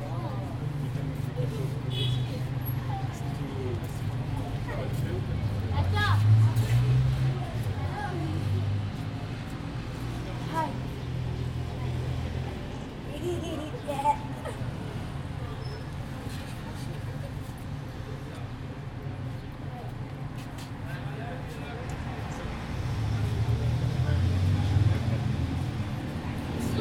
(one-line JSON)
{"title": "Tehran Province, Tehran, District, Shariati St, No., Iran - Streetnoise", "date": "2019-10-05 16:37:00", "latitude": "35.71", "longitude": "51.43", "altitude": "1219", "timezone": "Asia/Tehran"}